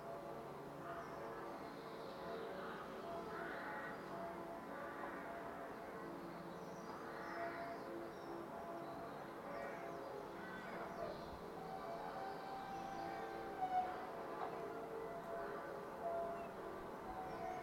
Eckernförder Str., Kronshagen, Deutschland - Sunday morning
Sunday morning in a rather quiet neighbourhood, distant church bells ringing, singing birds (mainly blackbirds and pigeons), a neighbour at his trash can, a distant train passing by, a plane crossing high above; Tascam DR-100 MK III built-in uni-directional stereo microphones with furry wind screen
Kronshagen, Germany, 10 March 2019